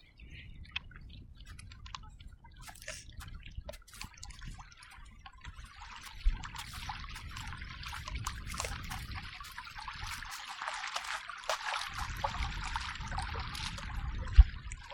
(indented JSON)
{
  "title": "Kunkel Lake, Ouabache State Park, Bluffton, IN, USA - Wading in Kunkel Lake near the boathouse (sound recording by Angel Soto)",
  "date": "2019-04-13 14:45:00",
  "description": "Sound recording by Angel Soto. Wading in Kunkel Lake near the boathouse, Ouabache State Park, Bluffton, IN. Recorded at an Arts in the Parks Soundscape workshop at Ouabache State Park, Bluffton, IN. Sponsored by the Indiana Arts Commission and the Indiana Department of Natural Resources.",
  "latitude": "40.72",
  "longitude": "-85.11",
  "altitude": "251",
  "timezone": "America/Indiana/Indianapolis"
}